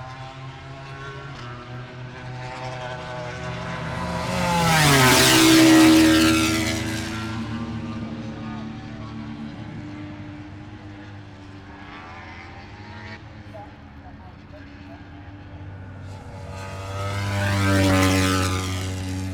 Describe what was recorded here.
moto grand pix ... free practice one ... maggotts ... open lavalier mics on T bar and mini tripod ...